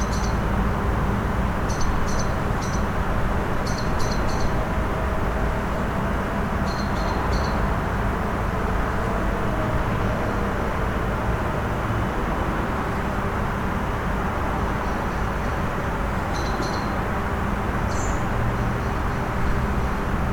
bergwerk ost, eingang kokereistrasse - bergwerk ost, hamm (westf), eingang kokereistrasse
bergwerk ost, hamm (westf), eingang kokereistrasse